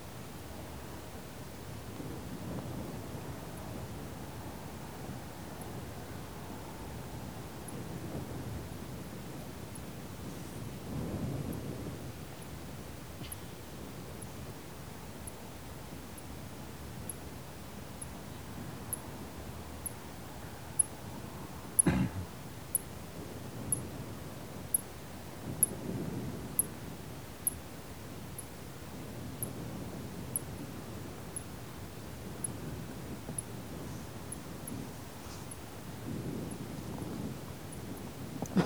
With a group of bird watchers at nightfall, waiting for the eagle owl to hoot.